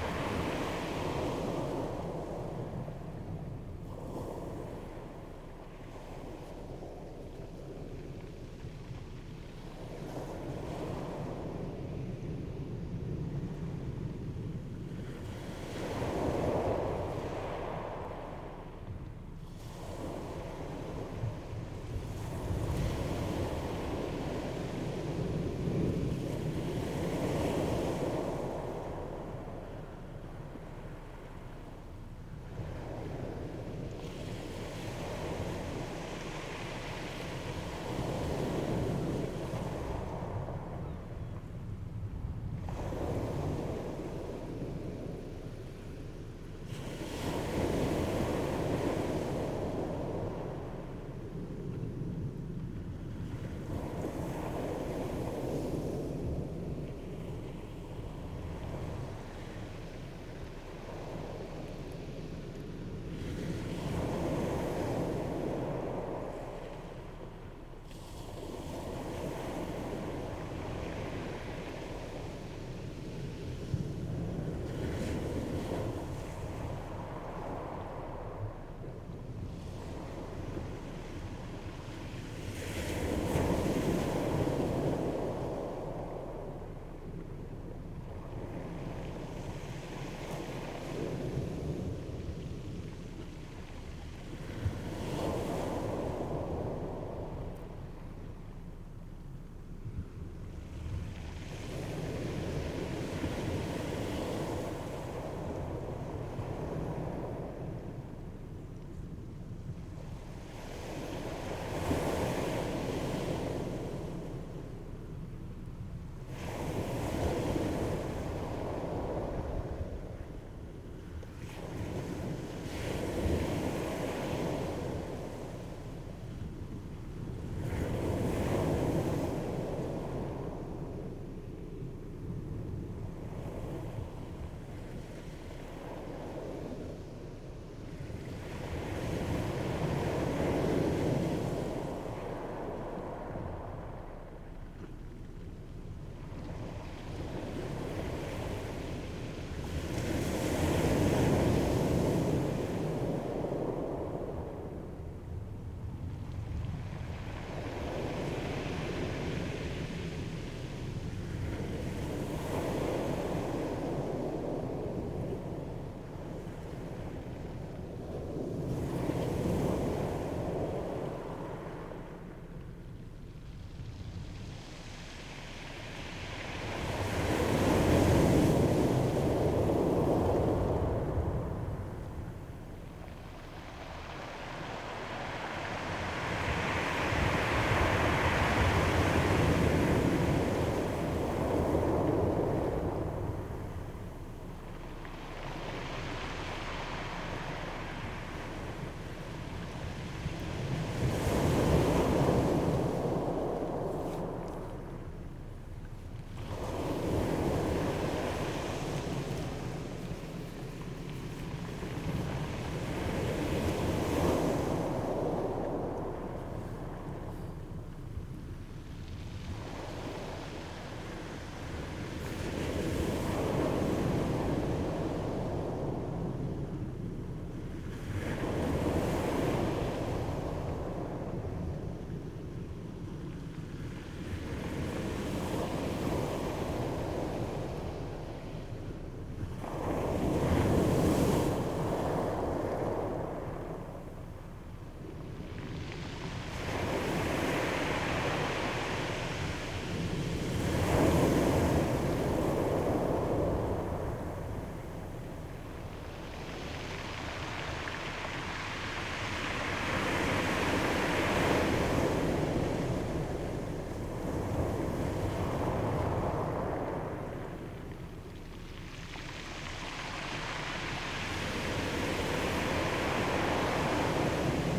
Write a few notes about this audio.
Situated right by the waters edge by rocky tide defences as the tide comes in. Lom Mikrousi microphones, Sound Devices Mix Pre 6ii recorder.